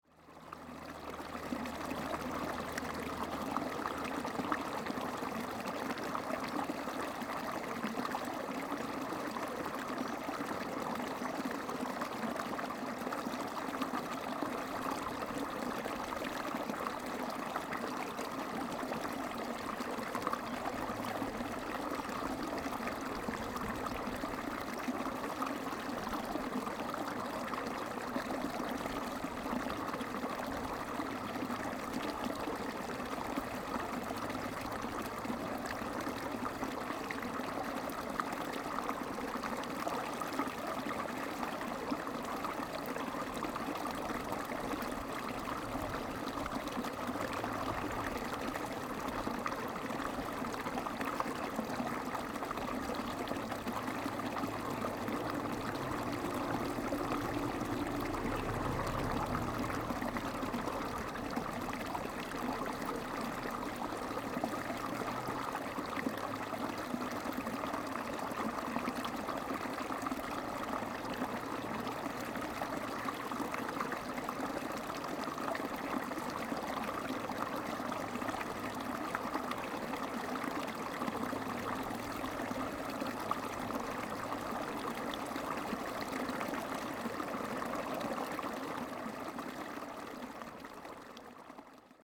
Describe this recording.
In the farmland, Sound water, Very hot weather, Zoom H2n MS+XY